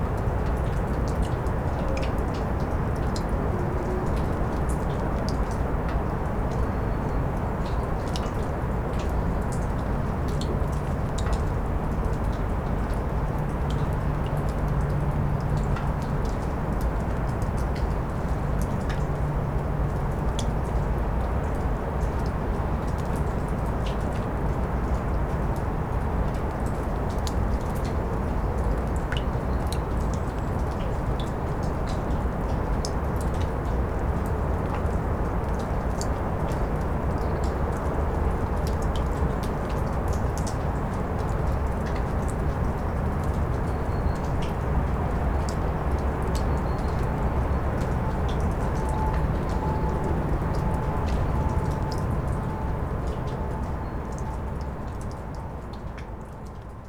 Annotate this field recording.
dropping water in the cacophony of cityscape